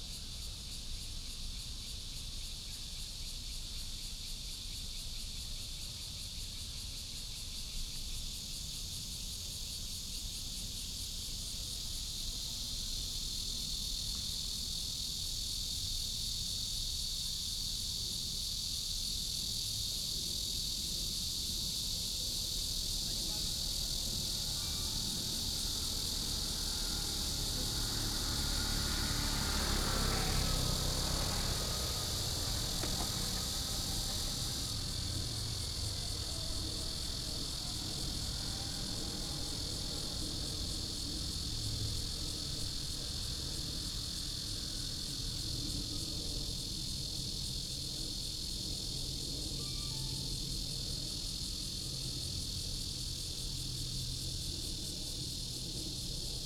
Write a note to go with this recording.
Cicadas, sound of birds, Traffic sound, The plane flew through, Phone message sound